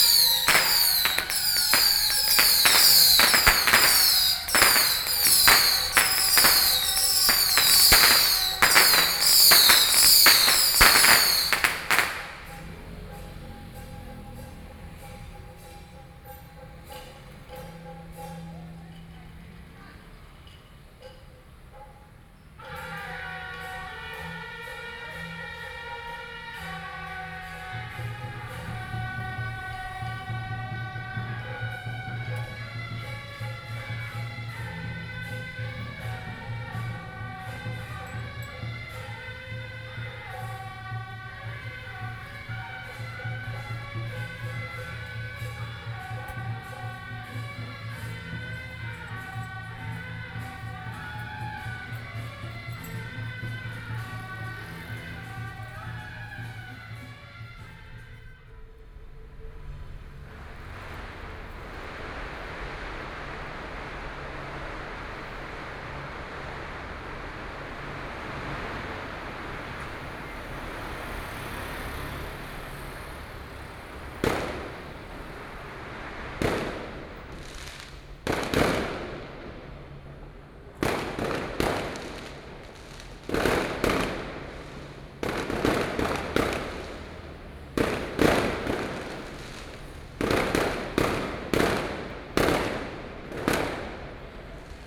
Yancheng, Kaohsiung - Traditional temple festivals
Traditional temple festivals, Firework, Local traditional performing groups, Sony PCM D50 + Soundman OKM II
高雄市 (Kaohsiung City), 中華民國, April 2013